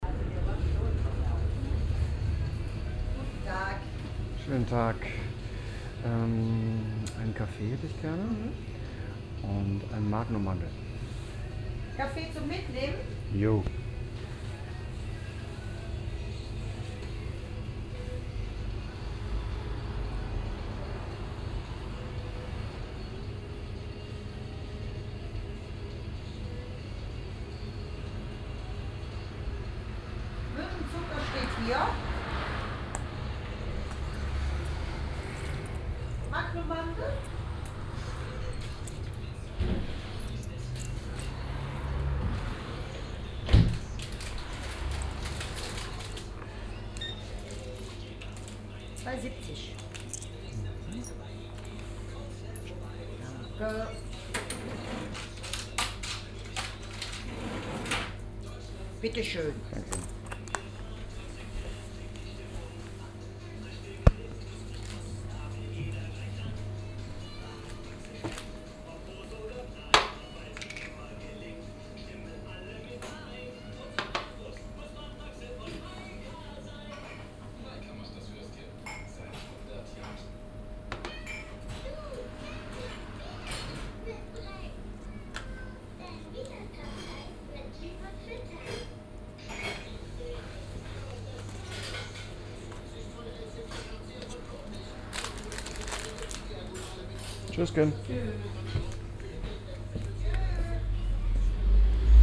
cologne, alteburger strasse, kiosk
radioatmo, bestellung und service in kölner südstadtkiosk, morgens
- soundmap köln/ nrw
project: social ambiences/ listen to the people - in & outdoor nearfield recordings